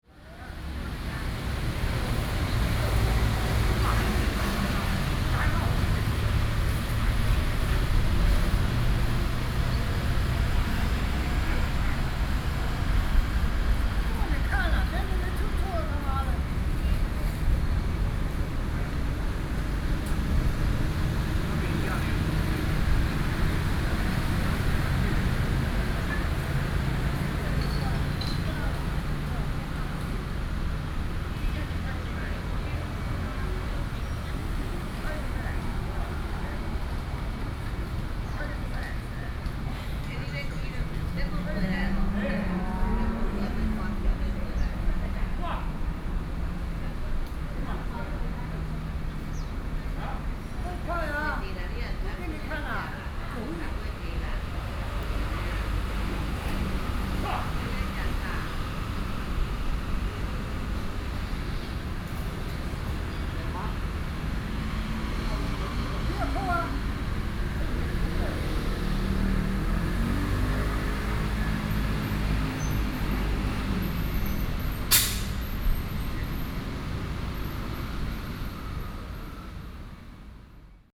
瑠公公園, Xindian District, New Taipei city - Chat
A few old people in the chat, Traffic Noise, Zoom H4n+ Soundman OKM II
New Taipei City, Taiwan, 28 June 2012